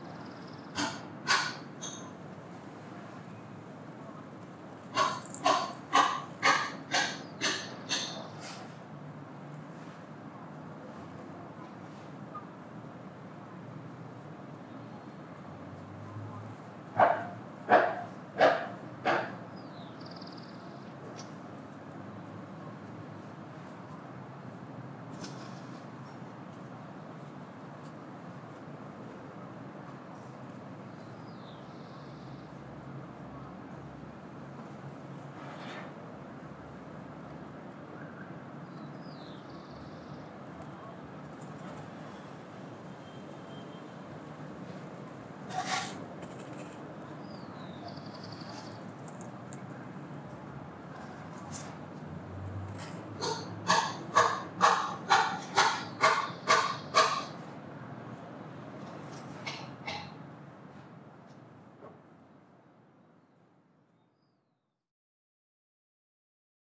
Diagonal 39b Sur, Bogotá, Colombia - Residential area New Villa mayor
3:00 pm
Portal de las villas residential complex, new villa mayor neighborhood, In the distance you can hear the noise of the city, while more closely, two little birds singing and answering each other, in addition to that a worker is sawing a table of wood.